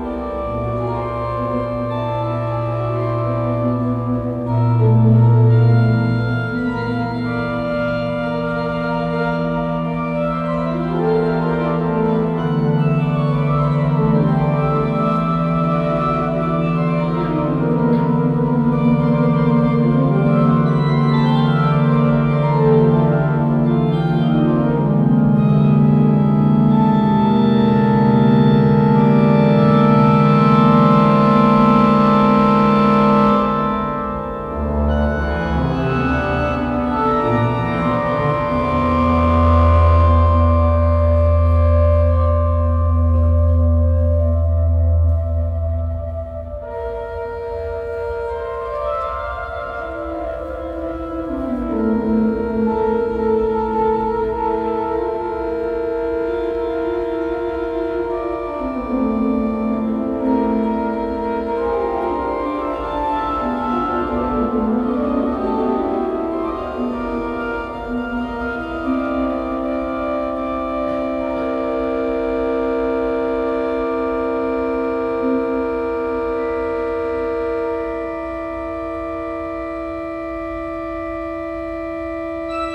Inside the hall 21 of the alte Farbwerke, during the performance of the piece Preparatio Mortis by Jan Fabre at the asphalt festival 2014. The sound of organ music.
soundmap nrw - topographic field recordings, social ambiences and art places